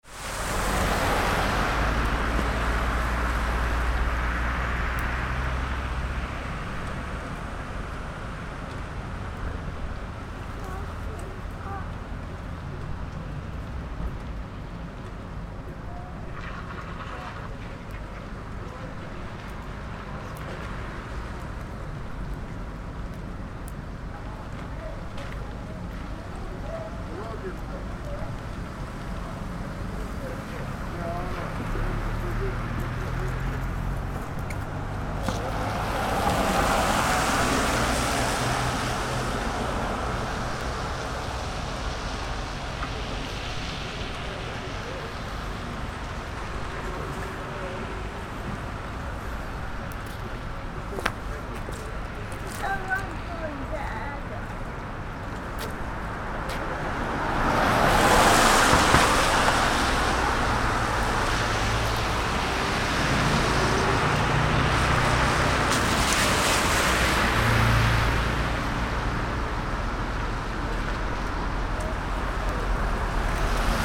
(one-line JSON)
{"title": "Storgatan/Kungsgatan, Sollefteå, Street crossing", "date": "2011-07-18 10:50:00", "description": "The ending stop of the soundwalk at the rather busy (for being a small town) street crossing of Storgatan and Kungsgatan. Rain is still present which can be heard very\nwell in the wheels in water sounds. When listening here we realized there is a mismatch on one of the manhole covers in the street there so when cars are passing over it, it makes a heavy metal sound which brings a special aural profile to this place (here it is only heard once or twice though). WLD", "latitude": "63.17", "longitude": "17.27", "altitude": "2", "timezone": "Europe/Stockholm"}